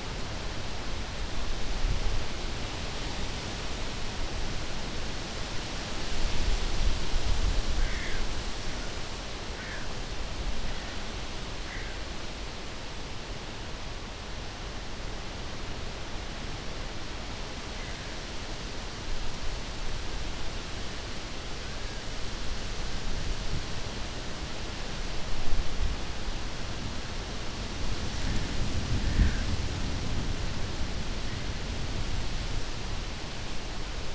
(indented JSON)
{
  "title": "Tempelhofer Feld, Berlin, Deutschland - August Sunday, distant thunder, moderate wind",
  "date": "2016-08-21 13:45:00",
  "description": "in a hurry, had to escape the rain, which quickly approached while recording\n(Sony PCM D50, Primo EM 172)",
  "latitude": "52.48",
  "longitude": "13.40",
  "altitude": "42",
  "timezone": "Europe/Berlin"
}